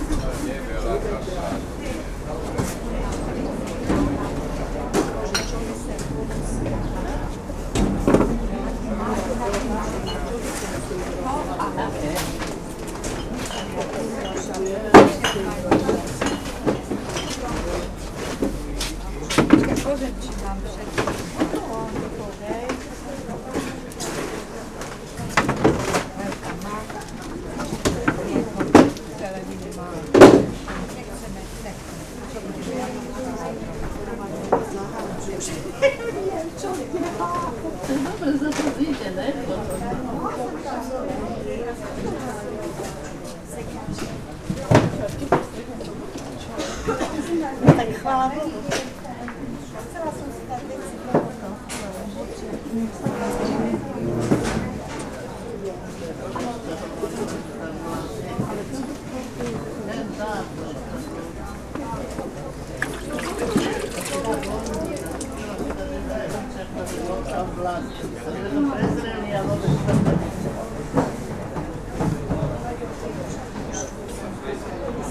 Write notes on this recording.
recorded with binaural microphones